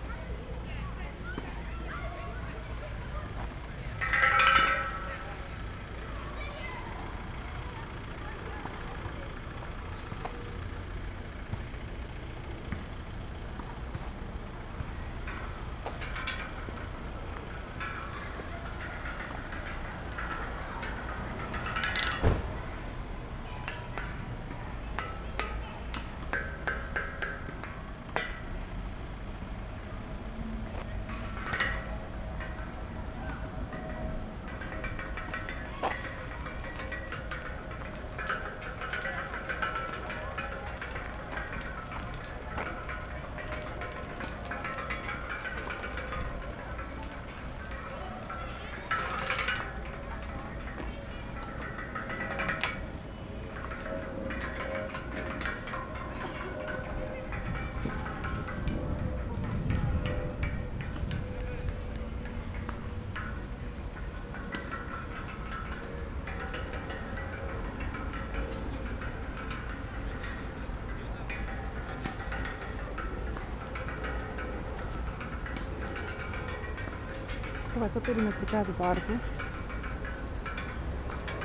London Borough of Hammersmith and Fulham, Greater London, UK - Railings
Binaural recording of the railings surrounding the tennis court at Brook Green Park, London.
England, United Kingdom, European Union, 1 June 2013